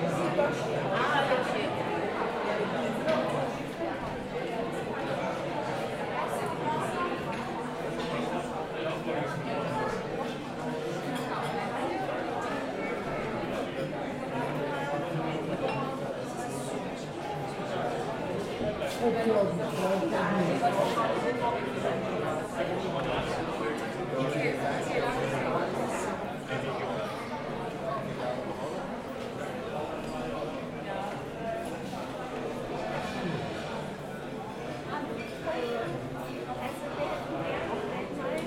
Charles-Eames-Straße, Weil am Rhein, Deutschland - Vitra - Inside
Innenaufnahme in Café
Weil am Rhein, Germany, 5 May 2019, ~12:00